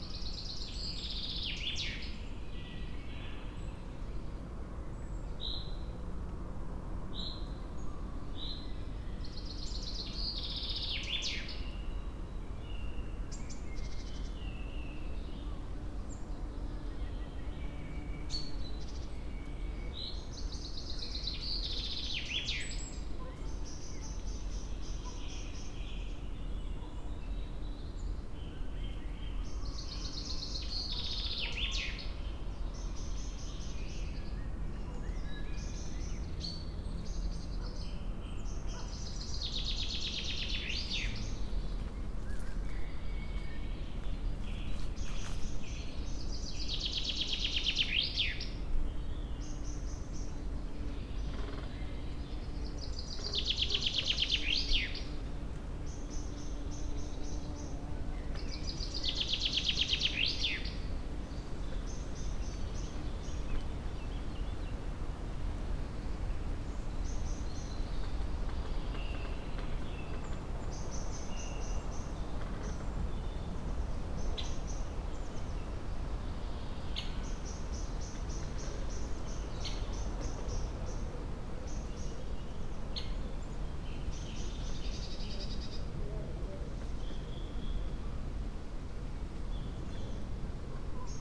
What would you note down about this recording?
birds, bees, winds, faraway train and chain saw ....